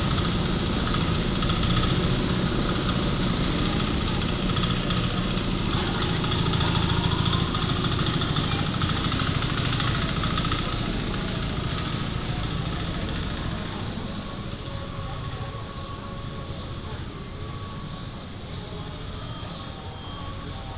長濱村, Changbin Township - A small village in the morning
A small village in the morning, In the side of the road, Traffic Sound, Market, in the Motorcycle repair shop
Taitung County, Changbin Township, 9 October 2014